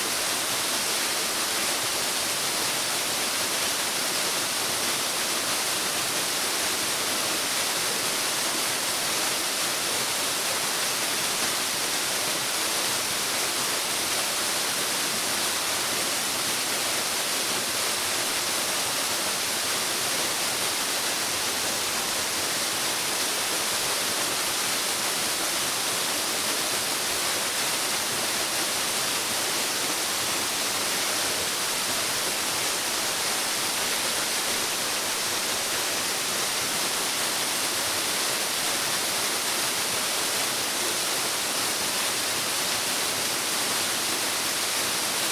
Pekelský potok, Ústí nad Labem, Česko - Pekelský waterfall
Sound of falling water in the winter.
Severozápad, Česko